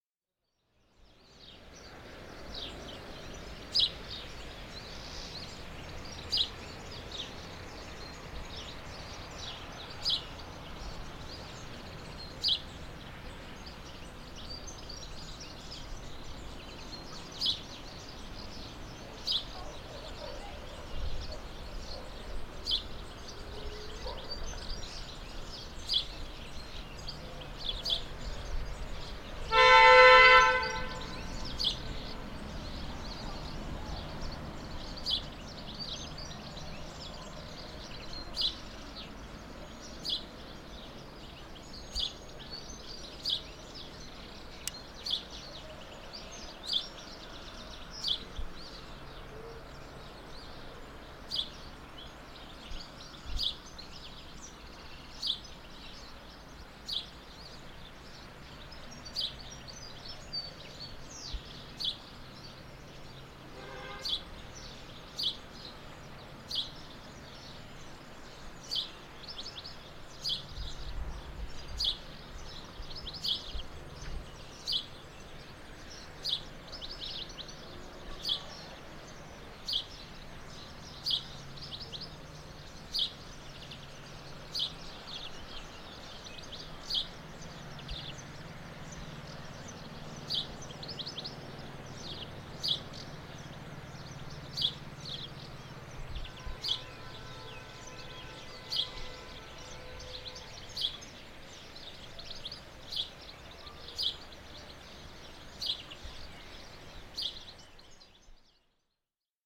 Ambiencia de Freixe de Espada a Cinta. Mapa Sonoro do Rio Douro. Freixe de Espada a Cinta soundscape. Douro River Soundmap
Freixo de Espada À Cinta, Portugal